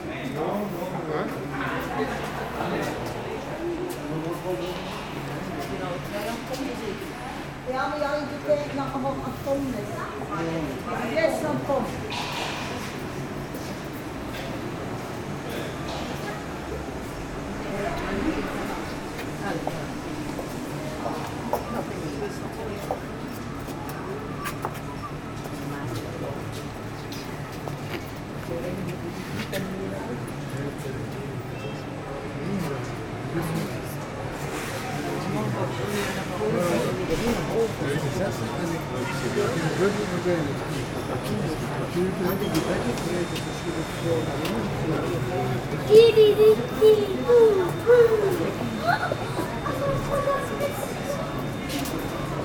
Le Tréport, France - Le Tréport funicular
Using the funicular located in the city called Le Tréport. We use here the top station. During this recording, people wait a few time, we embark in the funicular and after the travel, I record people waiting at the low station.